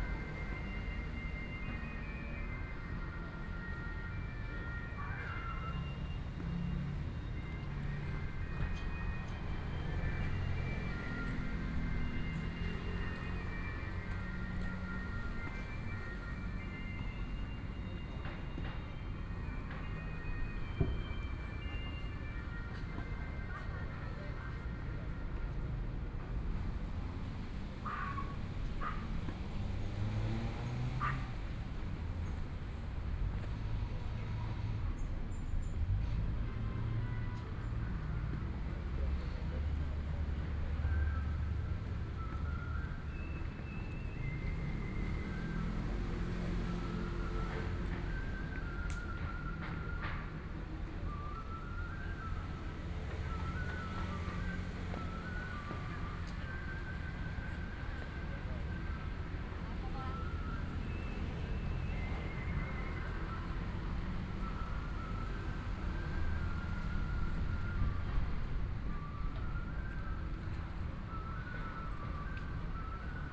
內湖區湖濱里, Taipei City - Sitting in the park
Sitting in the park, Traffic Sound, Construction noise
Binaural recordings